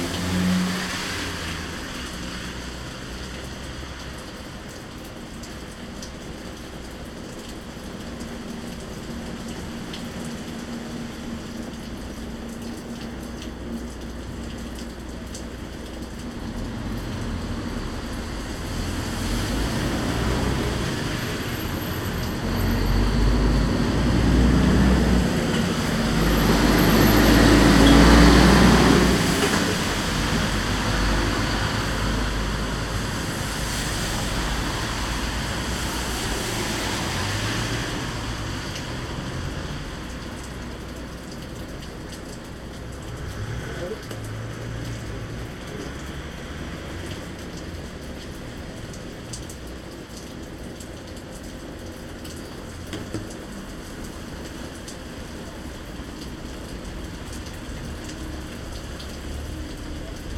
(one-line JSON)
{"title": "En terrasse, jour de pluie / Cornimont, France - En terrasse, jour de pluie.", "date": "2012-10-12 11:00:00", "description": "Dans le cadre de l’appel à projet culturel du Parc naturel régional des Ballons des Vosges “Mon village et l’artiste”.", "latitude": "47.96", "longitude": "6.84", "altitude": "513", "timezone": "Europe/Paris"}